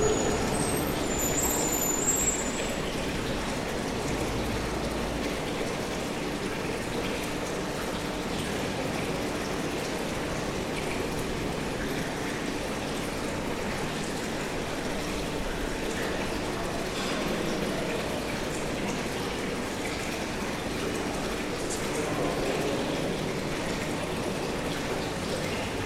zürich 1 - helmhaus, am brunnen
helmhaus, am brunnen